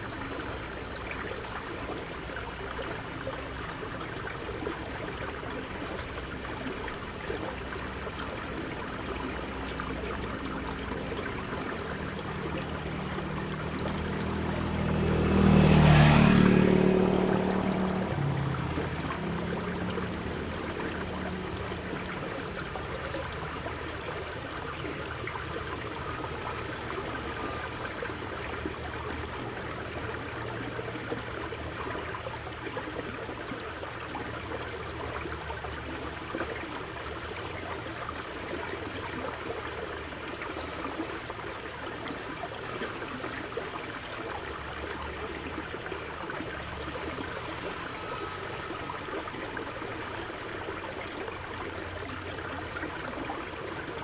桃米農業體驗區, 埔里鎮桃米里 - Sound of water
Cicada sounds, Bird sounds, Sound of water, Aqueduct
Zoom H2n MS+XY